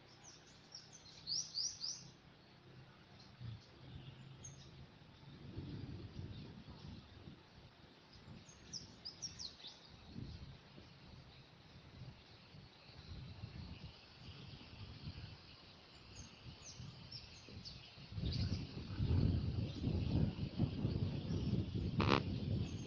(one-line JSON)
{"title": "Captação Realizada atrás da Garagem da UFRB", "date": "2020-10-30 11:07:00", "description": "Sons da Garagem da UFRB, momento sem atividades presenciais devido a COVID-19.", "latitude": "-12.66", "longitude": "-39.08", "altitude": "221", "timezone": "America/Bahia"}